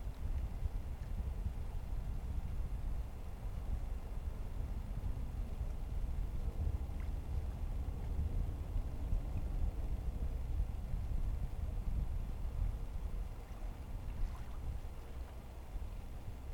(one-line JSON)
{"title": "Three Pines Rd., Bear Lake, MI, USA - November Breeze and Ducks", "date": "2015-11-17 17:45:00", "description": "Breezy evening, just as wind is starting to kick up for the night. Geese very high overhead, and ducks some distance out from the north shore. As heard from the top of steps leading down to water's edge. Stereo mic (Audio-Technica, AT-822), recorded via Sony MD (MZ-NF810).", "latitude": "44.44", "longitude": "-86.16", "altitude": "238", "timezone": "America/Detroit"}